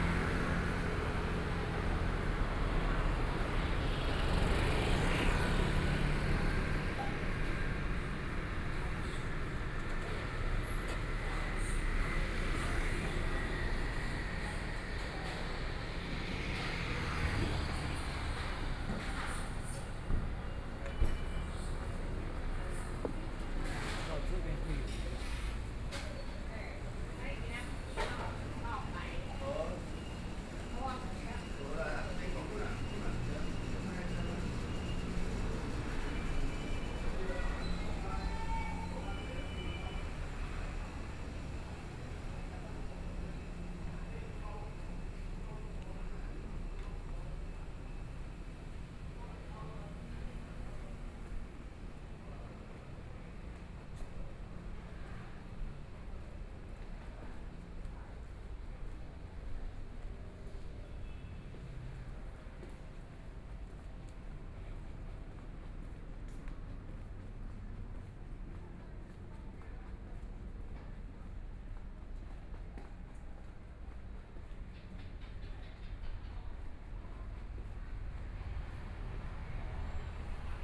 鹽埕區教仁里, Kaoshiung City - Walking in the small roadway
Walking in the small roadway, Traffic Sound
Yancheng District, Kaohsiung City, Taiwan, 13 May, ~21:00